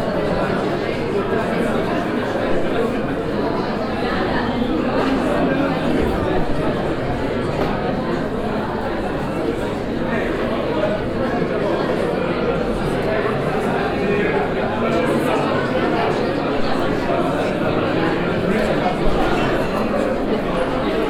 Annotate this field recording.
Im Foyer des traditionellem Kinos, welches in diesem Moment gefüllt ist mit Besuchern, die an einer Photo Wettbewerbs Vernissage teilnehmen. Inside the foyer of the traditional cinema. Here crowded with visitors of a photo contest vernissage. Projekt - Stadtklang//: Hörorte - topographic field recordings and social ambiences